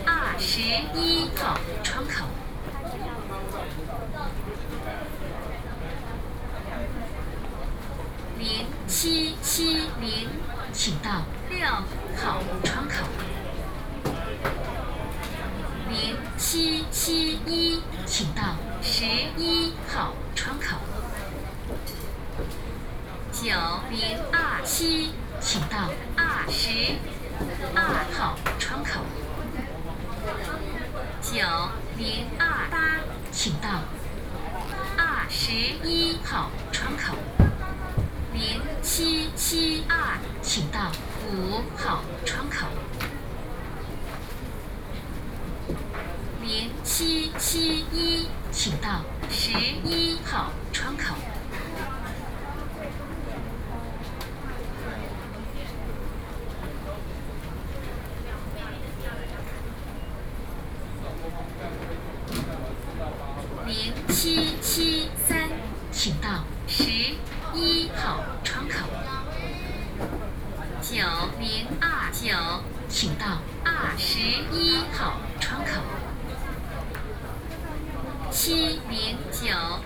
Bereau of Consular Affairs, Taipei City - broadcasting
Waiting for passport, Counter broadcasting, Sony PCM D50 + Soundman OKM II
Taipei City, Taiwan